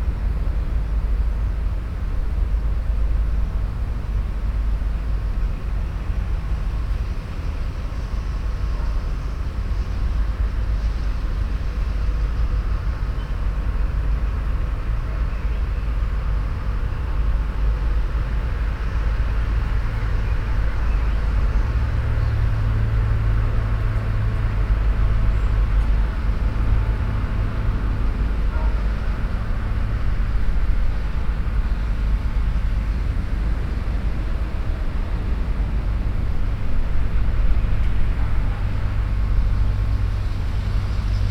Brussels, Ixelles Cemetery - Cimetière dIxelles.